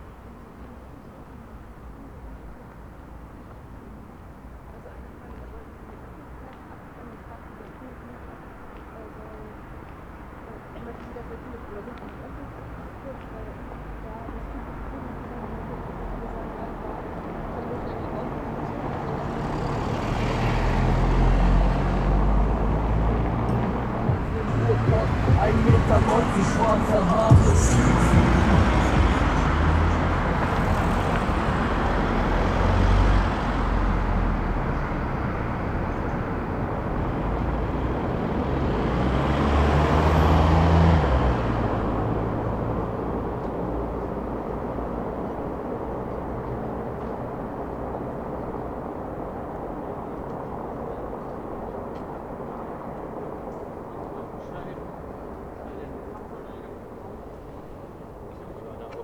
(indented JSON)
{
  "title": "Berlin: Vermessungspunkt Maybachufer / Bürknerstraße - Klangvermessung Kreuzkölln ::: 03.06.2011 ::: 01:57",
  "date": "2011-06-03 01:57:00",
  "latitude": "52.49",
  "longitude": "13.43",
  "altitude": "39",
  "timezone": "Europe/Berlin"
}